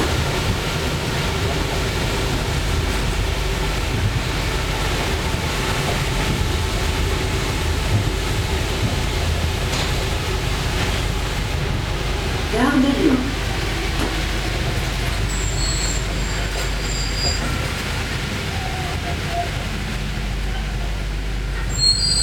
Gare Sncf Rez De Chausse, Rue de Bercy Galerie Diderot, Paris, France - SUBWAY : Gare de Lyon station
France métropolitaine, France, 19 June 2020, 08:30